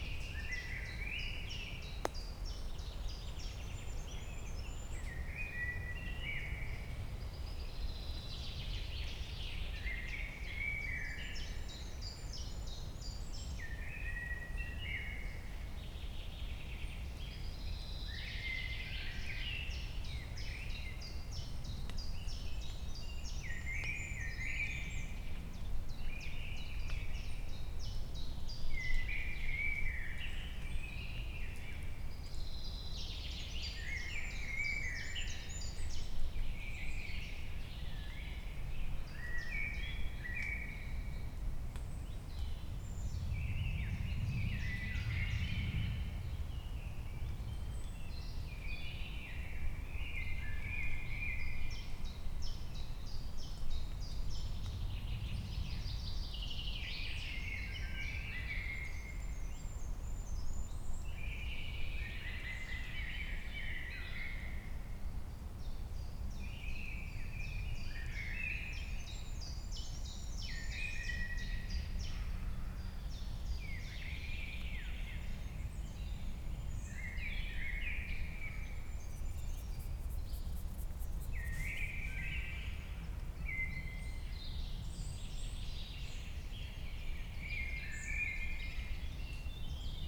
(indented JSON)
{
  "title": "Boowald - sound atmo on a normal day in spring",
  "date": "2016-05-02 11:06:00",
  "description": "This the sound atmosphere during a normal day in spring: Birds, airliners cracking sounds in the forest.",
  "latitude": "47.25",
  "longitude": "7.87",
  "altitude": "556",
  "timezone": "Europe/Zurich"
}